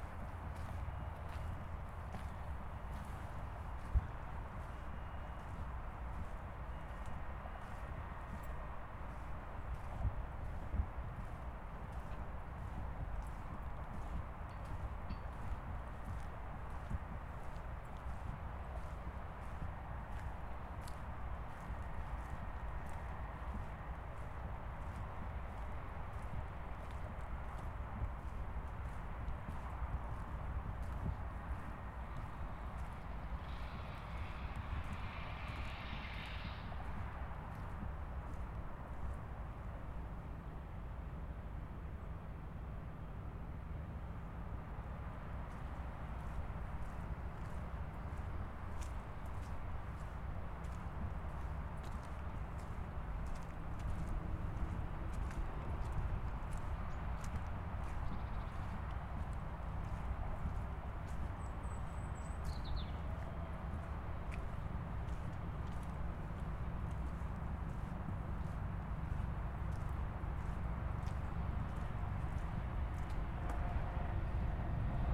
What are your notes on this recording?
With the wasted sound project, I am looking for sounds that are unheard of or considered as noise.